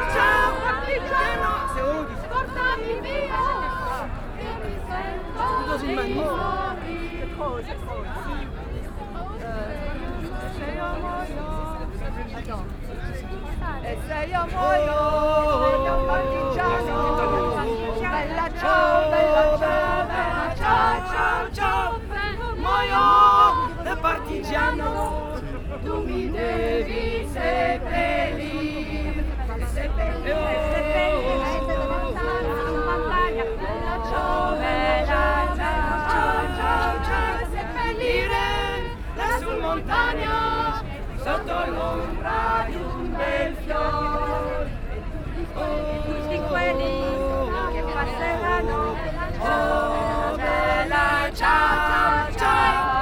Occupy Brussels, Boulevard Baudouin, Bella Ciao
City of Brussels, Belgium, October 2011